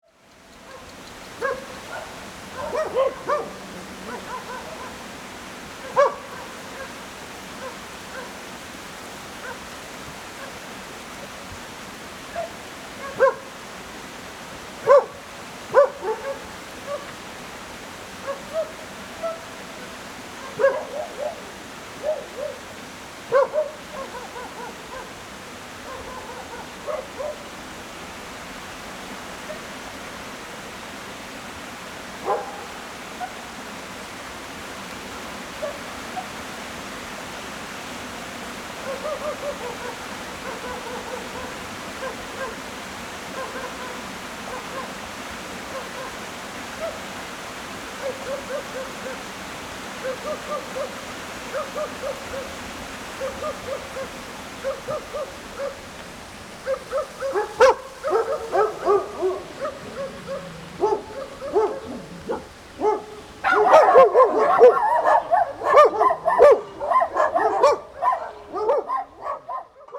sound of water streams, Dogs barking
Zoom H4n +Rode NT4